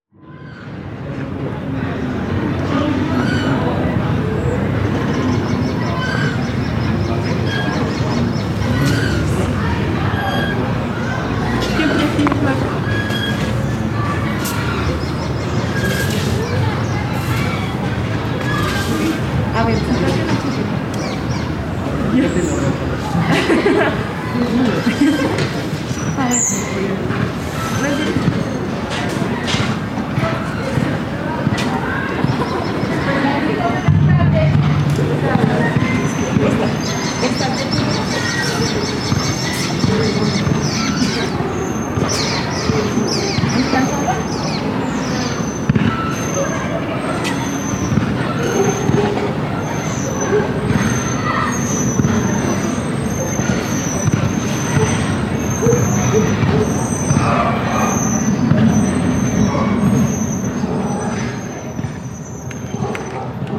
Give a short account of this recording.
Park Day. This soundscape was recorded in Chía, near a main road, at 5 o'clock in the afternoon. We can identify sounds mainly of people walking and talking, children playing, people playing sports, swings squeaking, wind and birds. We can also hear a light traffic in the background, and an airplane passing over the place.